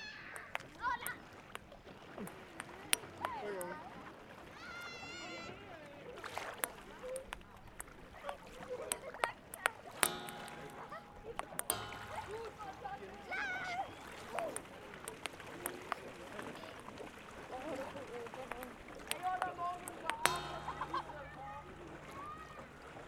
Strandbad Tegeler See, Tischtennisplatten, Schwarzer Weg, Berlin, Deutschland - Strandbad Tegeler See, Tischtennis
Strandbad Tegeler See, Tischtennisplatten, Tischtennis